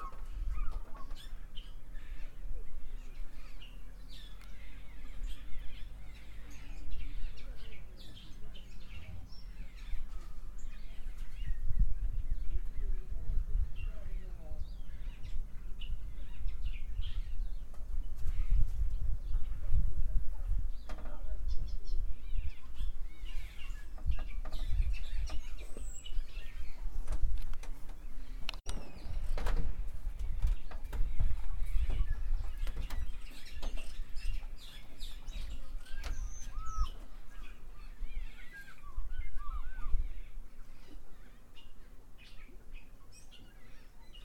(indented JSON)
{
  "title": "Namibia - Okavango - Ngepi Camp - Okavango birds",
  "date": "2013-10-20 10:06:00",
  "description": "birds in the Okavango region, by the river shore in the Ngepi Camp.",
  "latitude": "-18.53",
  "longitude": "18.20",
  "altitude": "1172",
  "timezone": "Africa/Windhoek"
}